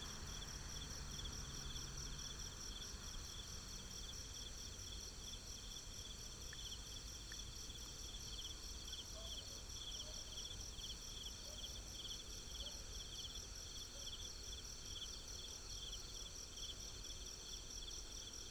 Next to the farm, Traffic sound, Insects, Binaural recordings, Sony PCM D100+ Soundman OKM II